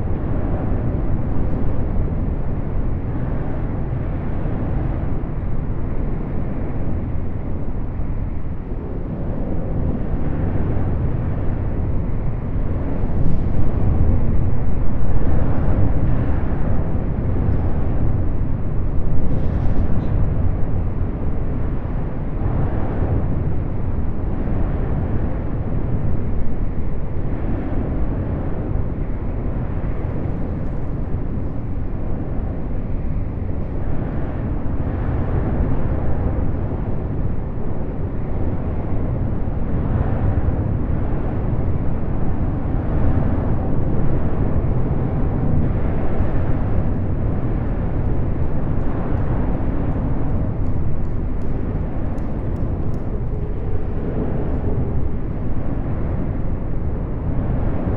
Ripple Pl, Los Angeles, CA, USA - Confluence of the 2 freeway and the LA River
Underside of the 2 freeway where as it meets the LA River